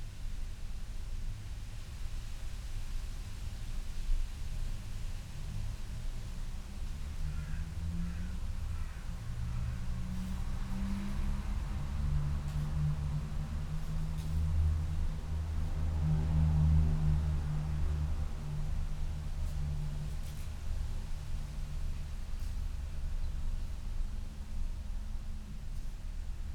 st pauls parish church of north sunderland and seahouses ... inside the porch ... dpa 4060s clipped to bag to zoom h5 ...